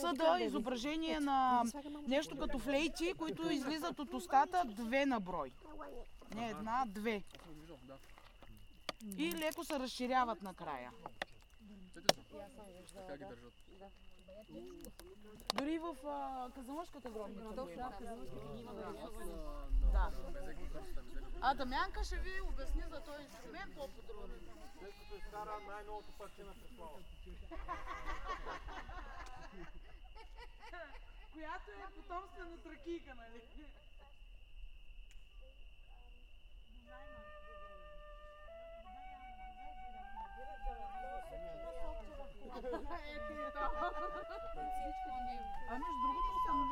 {
  "title": "Kazanlak, Bulgaria - Thracians and flute music",
  "date": "2016-08-27 21:00:00",
  "description": "A group of local bulgarians camping in the forest surrounding the lake. They talk about thracian heritage in this lands - their music and rituals. One lady plays the flute (music from Debussy), as an example somehow close to what thracians had. There is a camping fire and you can hear the night sounds of the crickets.",
  "latitude": "42.61",
  "longitude": "25.29",
  "altitude": "422",
  "timezone": "Europe/Sofia"
}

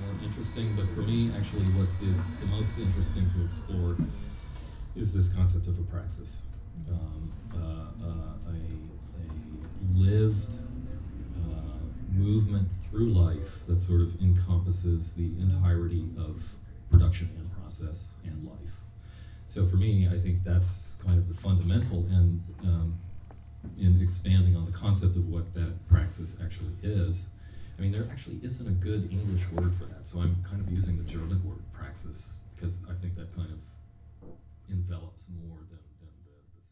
{
  "title": "neoscenes: killertv fragment",
  "date": "2007-03-14 12:36:00",
  "description": "A short fragment from the premiere episode of KillerTV with the Waag Society in their new pakhuis de Zwijger studio",
  "latitude": "52.38",
  "longitude": "4.92",
  "altitude": "2",
  "timezone": "Europe/Berlin"
}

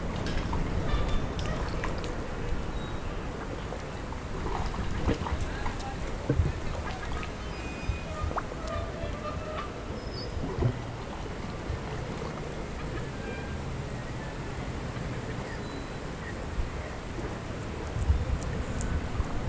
{
  "title": "Paseo de Isaac Peral, Águilas, Murcia, España - Aguilas Port",
  "date": "2021-02-27 13:19:00",
  "description": "A soundscape about the pandemic public expresion of people, we still wearing masks. The sound of the little blops of the water arround the parked boats, is a beautifull sound for being interpreted with flutes.",
  "latitude": "37.40",
  "longitude": "-1.58",
  "altitude": "6",
  "timezone": "Europe/Madrid"
}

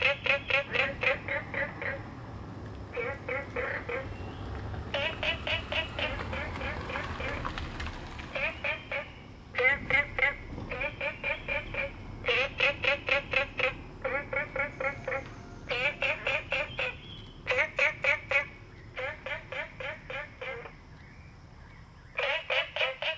{
  "title": "蓮華池藥用植物標本園, Nantou County - Frogs chirping",
  "date": "2016-04-26 07:11:00",
  "description": "birds and Insects sounds, Ecological pool, Frogs chirping\nZoom H2n MS+XY",
  "latitude": "23.92",
  "longitude": "120.89",
  "altitude": "700",
  "timezone": "Asia/Taipei"
}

